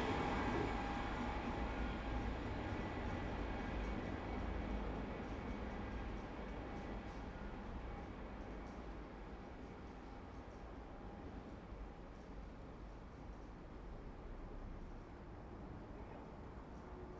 縱貫公路, Xiangshan Dist., Hsinchu City - Next to the railroad tracks
Next to the railroad tracks, The train passes by, traffic sound, There is a group of old people playing cards across the tracks, Zoom H2n MS+XY